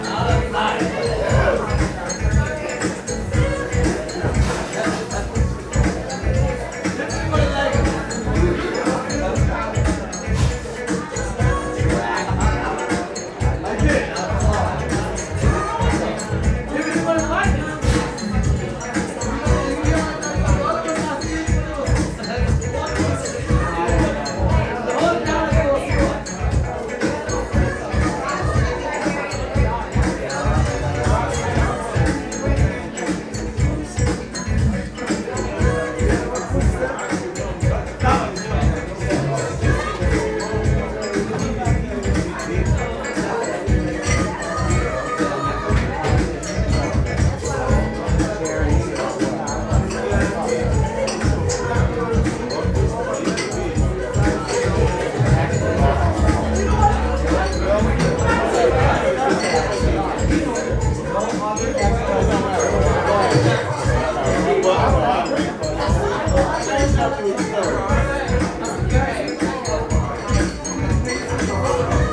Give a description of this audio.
sweetwater tavern, 400 e congress st, detroit, mi 48226